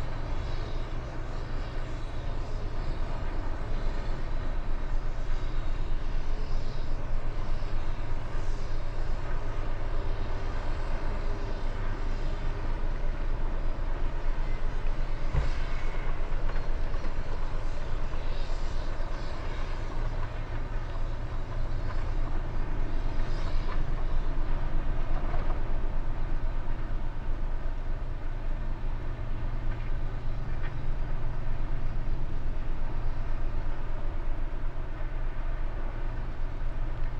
Steinbruch Steeden, Deutschland - lime stone quarry ambience
lime stone quarry ambience
(Sony PCM D50, Primo EM272)